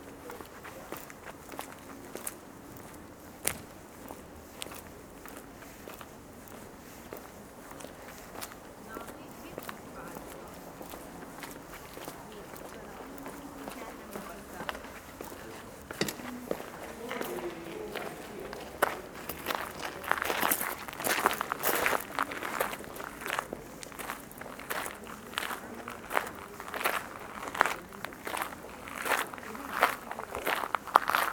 Cemetery, Pavia, Italy - walk at the Cemetery
walk at the Cemetery on the 2nd of November. Several people talking and walking on the gravel
2 November 2012